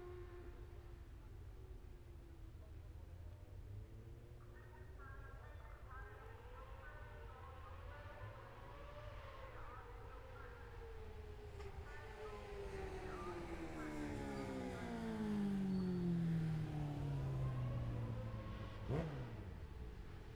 Scarborough District, UK - Motorcycle Road Racing 2016 ... Gold Cup ...
600cc evens practice ... Mere Hairpin ... Oliver's Mount ... Scarborough ... open lavalier mics clipped to baseball cap ... pseudo binaural ... sort of ...